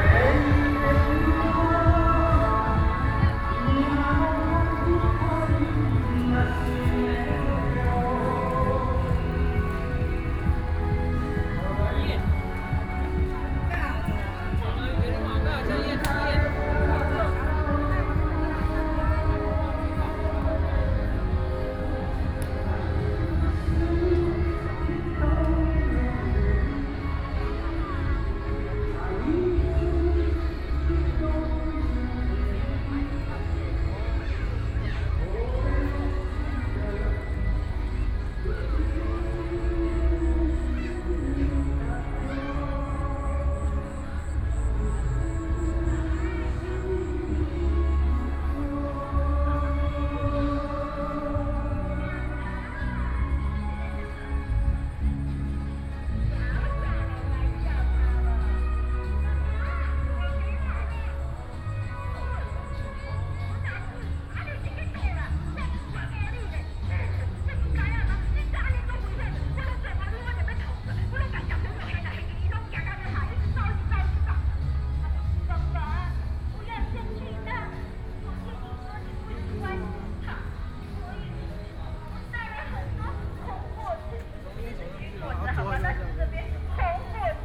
walking on the Road, Traffic Sound, Various shops voices, Walking towards the park direction